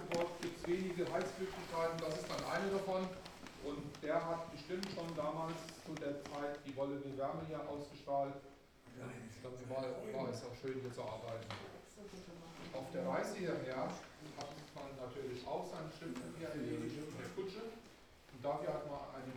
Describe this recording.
guided tour through sooneck castle (3), visitors on the spiral stairs to the first floor, different rooms, guide continues the tour, the city, the country & me: october 17, 2010